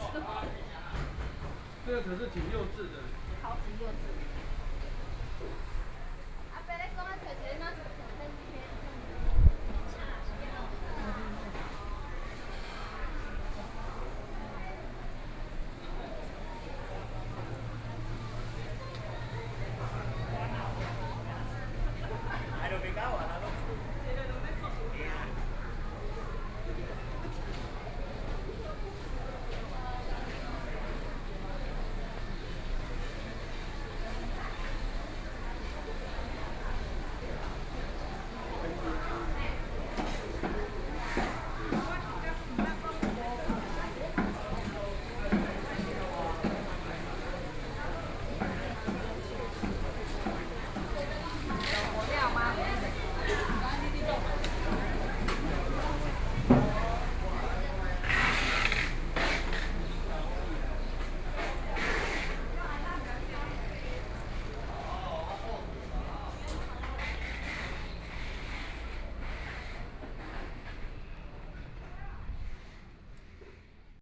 中華市場, Hualien City - Walking through the market
Walking through the market, Traffic Sound
Hualien City, 信義街77巷4號, 29 August